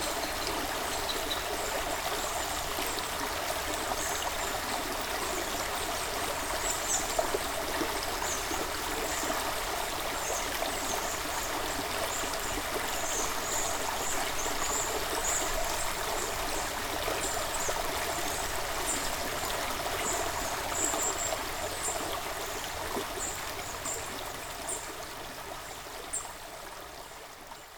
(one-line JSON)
{"title": "Oigny, France - Seine river", "date": "2017-07-30 08:30:00", "description": "Into the forest, the Seine river is flowing in a very bucolic landscape. A lot of Grey Wagtail are flying and singing. It's a discreet sharp shout, always near the water or over the river.", "latitude": "47.58", "longitude": "4.70", "altitude": "358", "timezone": "Europe/Paris"}